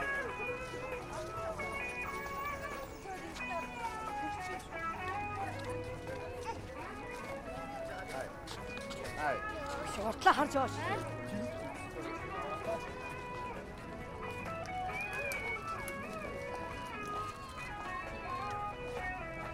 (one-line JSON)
{"title": "Khoroo, Ulaanbaatar, Mongolei - Walk to the park", "date": "2013-06-01 14:55:00", "description": "children's day in ub, everyone is walking to the amusement park", "latitude": "47.91", "longitude": "106.92", "altitude": "1290", "timezone": "Asia/Ulaanbaatar"}